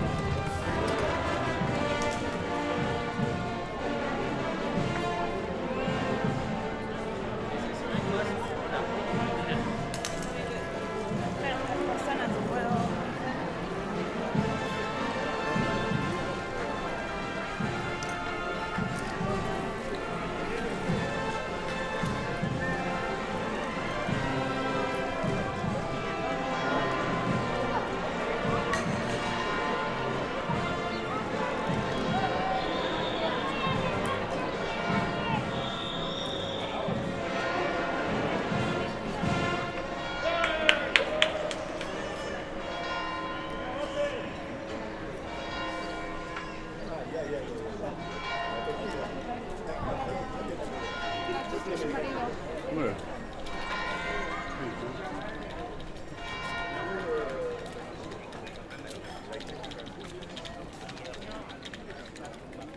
Soraluze Kalea, Donostia, Gipuzkoa, Espagne - Bandas in San Sebastian
musician group, church bell, city noise
Captation : ZOOMH6
Euskadi, España, 31 May